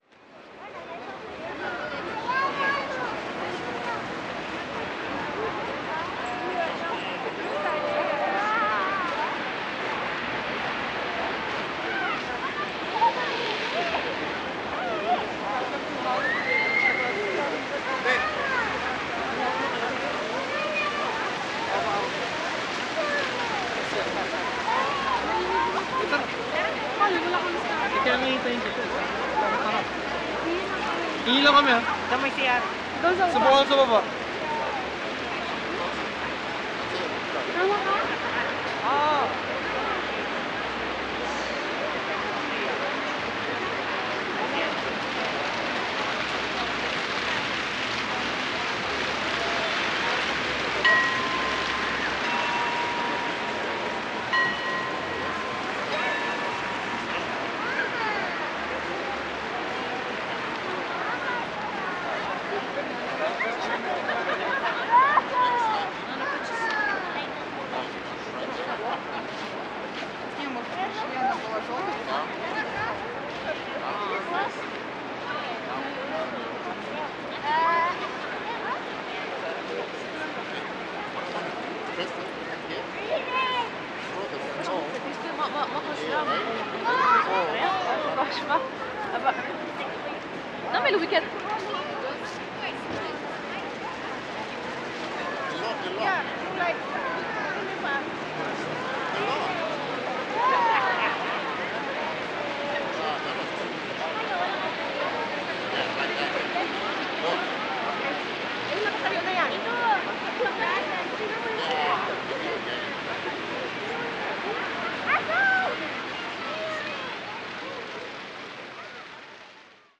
Rue Saint-Sulpice, Montréal, QC, Canada - Notre-Dame Basilica of Montreal & Place D’Armes
Recording of multiple groups of people playing and enjoying their time in the public space across the Basilica. Multiple languages are being spoken, highlighting some of the cultural diversity Montreal represents. A bell is struck in the background.
Montréal, Québec, Canada